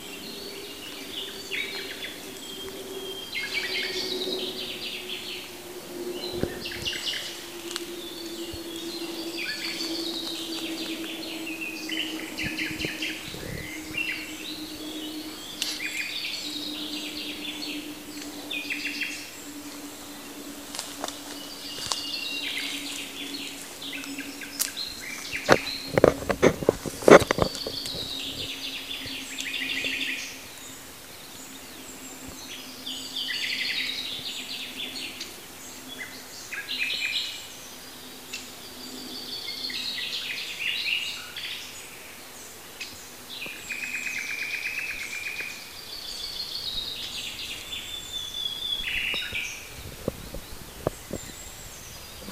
Parco del Roccolo, Parabiago, il Roccolo
il roccolo (giugno 2003)